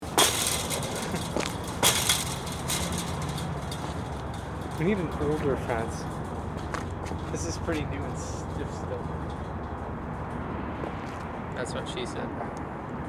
Fence, Encino, CA
Fence kicking, and joke
San Fernando Valley, CA, USA, 9 February 2011